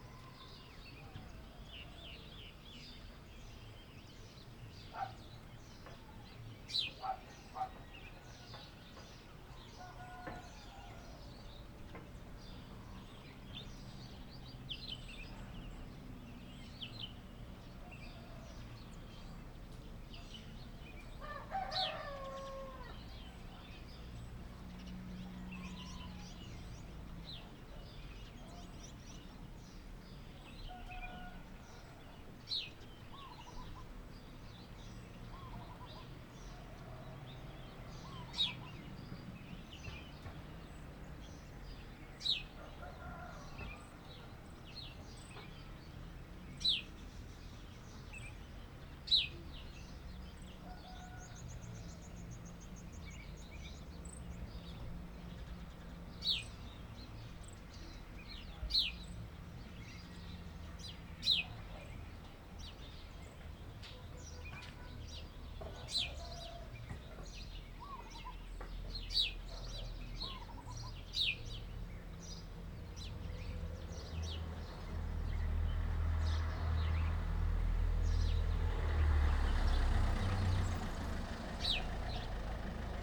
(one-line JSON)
{"title": "Route du Bras des Étangs CILAOS - CILAOS le matin entre deux concerts dhélicoptères", "date": "2020-02-10 07:51:00", "description": "CILAOS le matin entre deux concerts d'hélicoptères (en ce moment il n'y en a pas trop, pas de touristes chinois)", "latitude": "-21.14", "longitude": "55.47", "altitude": "1190", "timezone": "Indian/Reunion"}